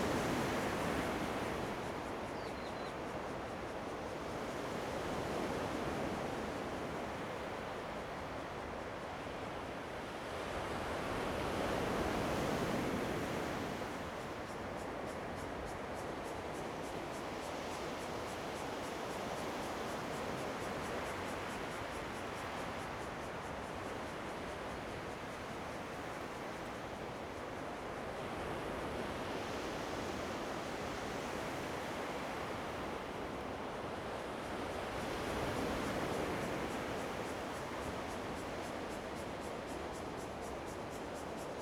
長濱村, Changbin Township - Thunder and the waves
At the seaside, Sound of the waves, Thunder, Very hot weather
Zoom H2n MS+ XY
8 September 2014, ~13:00